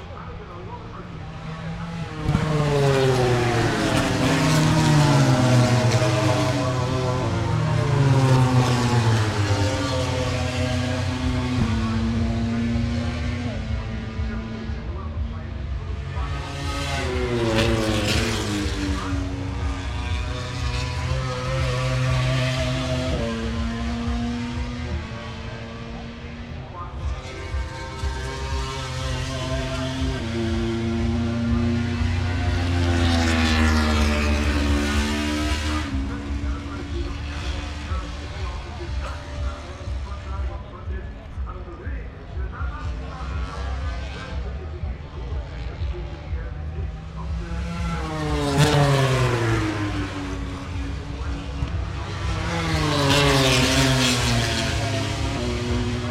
british motorcycle grand prix 2019 ... moto grand prix ... free practice one ... some commentary ... lavalier mics clipped to bag ... background noise ... the disco in the entertainment zone ...
East Midlands, England, UK, 23 August